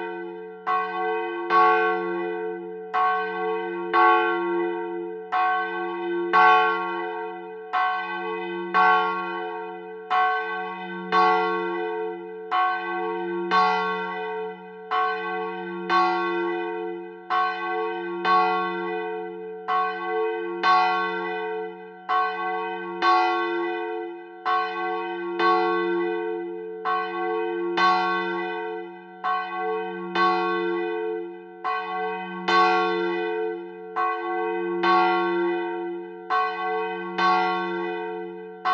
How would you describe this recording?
St-Victor de Buthon (Eure-et-Loir), Église St-Victor et St-Gilles, volée cloche 1, Prise de son : JF CAVRO